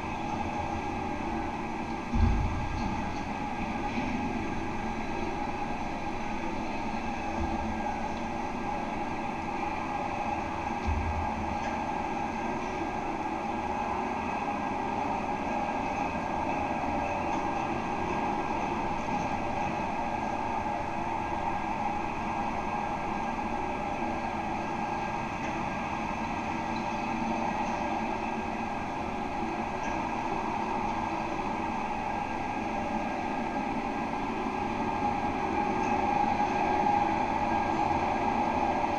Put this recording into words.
a more recently built guardrail, separating the actively used parking lot from the abandoned riverside space and stairs. recorded with contact microphones. all recordings on this spot were made within a few square meters' radius.